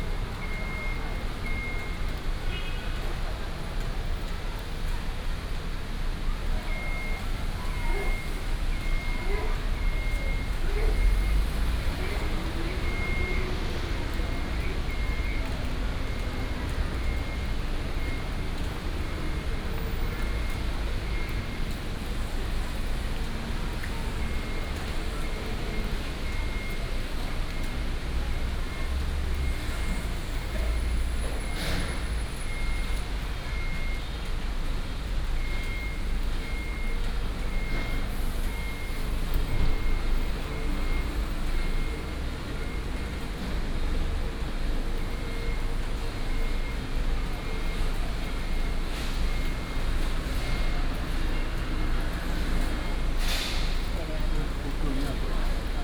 Ren 2nd Rd., Ren’ai Dist., Keelung City - bus station
Rainy day, bus station, Parking construction sound, Traffic sound, Binaural recordings, Sony PCM D100+ Soundman OKM II
21 November, 11:37